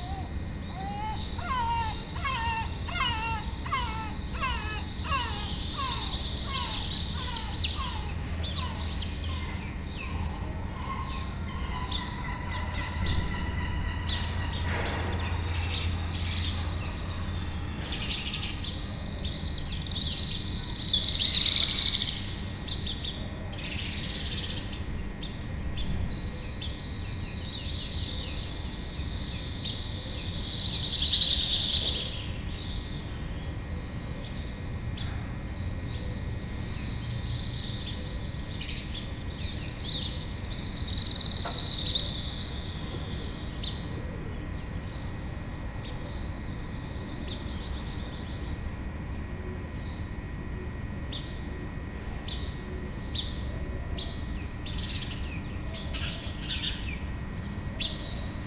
Beyoğlu/Istanbul Province, Turkey - Birds Early morning
Birds and electrical transformer. 2x DPA omni mics, Dat recorder